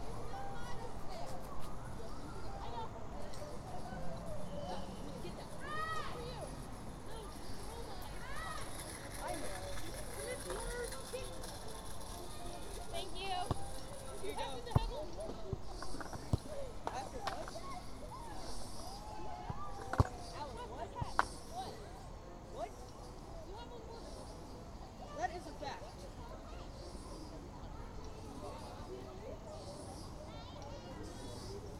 Roswell Rd, Marietta, GA, USA - East Cobb Park - Field
The edge of a park's playing field. There were some older children kicking around a soccer ball, and you can hear kids on the playground swing set to the left. A child can be heard playing the piano under the gazebo behind the recorder. It was a cool, sunny autumn day, and people were out walking and enjoying the weather.
Recorded with the unidirectional mics of the Tascam Dr-100mkiii.